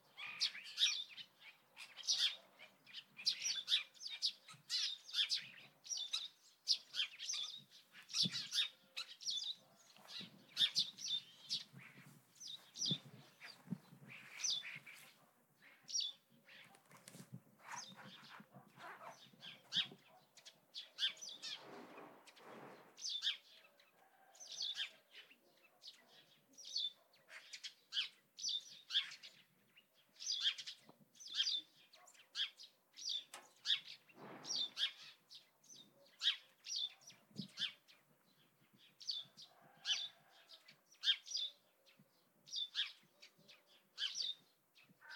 Filotas, Greece - Mornings of the past